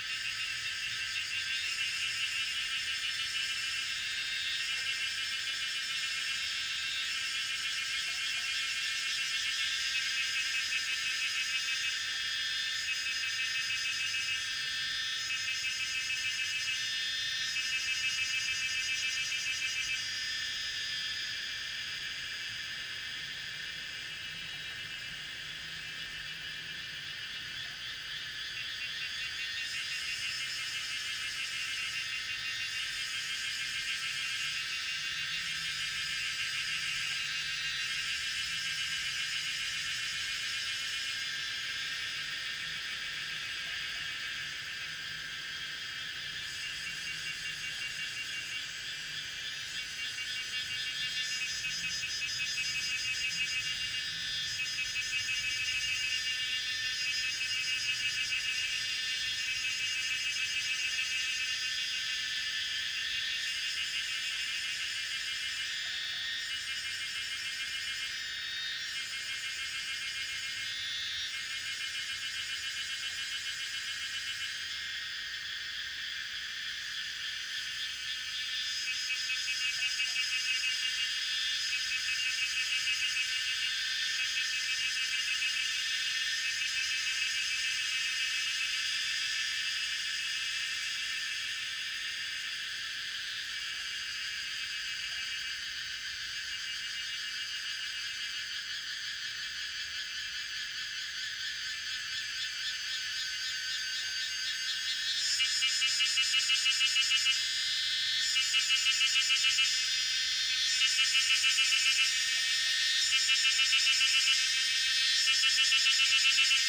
三角崙, 埔里鎮, Taiwan - In the woods
In the woods, Cicadas sound
Zoom H2n MS+XY
12 July 2016, 17:59